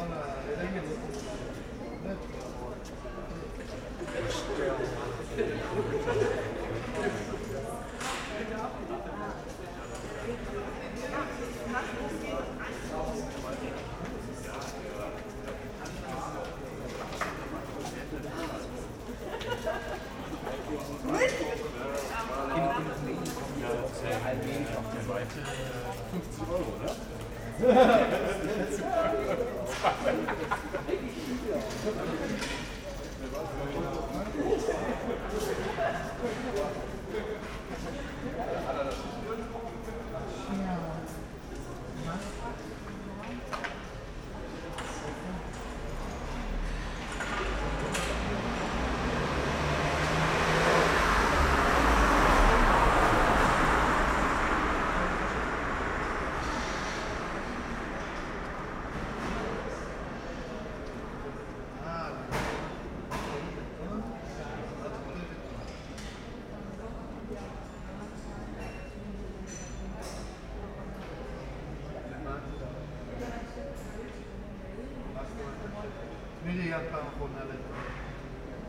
Stereorecording from a lower balcony during the night, light traffic, people are talking on the terracce of a resturant below.